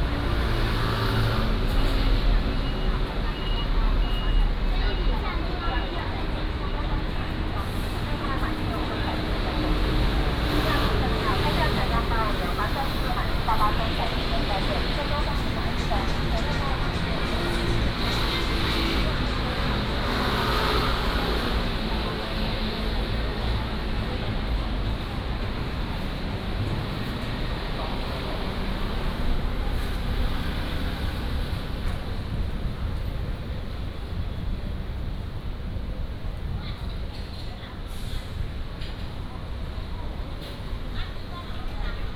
Walking on the road, soundwalk
Da’an District, Taipei City, Taiwan, June 2015